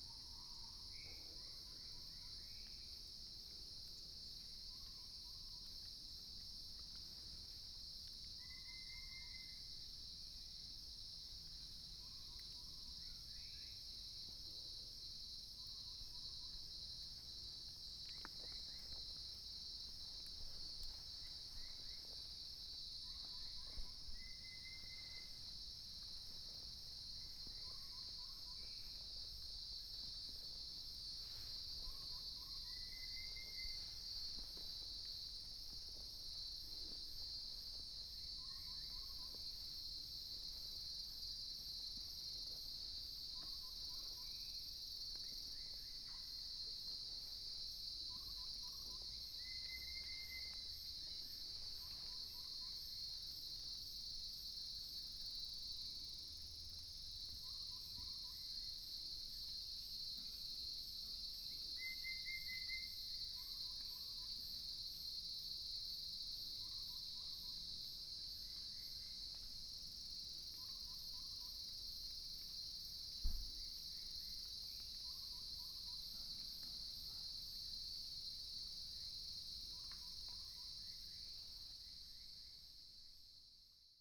Morning in the mountains, birds sound, Cicadas sound
Puli Township, 水上巷28號